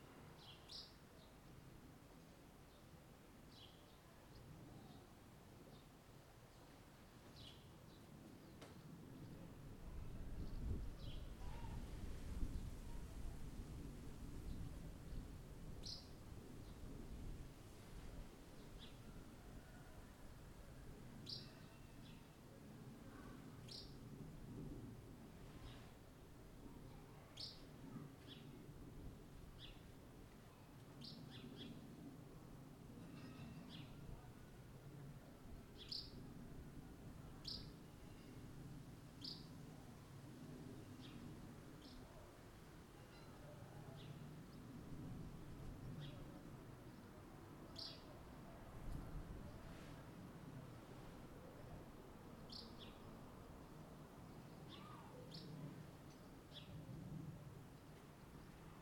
Church bells - Church bells; Sunday Mass
Church bells at noon. People going home from Sunday Mass. Birds (common house martin) singing. Village life on a Sunday. Recorded with Zoom H2n (XY, on a tripod, windscreen, gain at approximately 8.5).
Varaždinska županija, Hrvatska, 18 July 2021, ~12:00